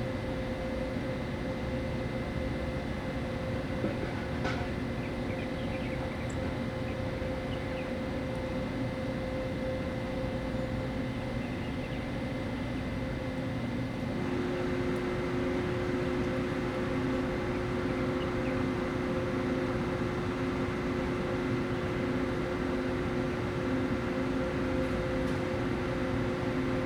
Beside the railway, Train ready to go, Sony Hi-MD MZ-RH1, Rode NT4
25 February, 08:29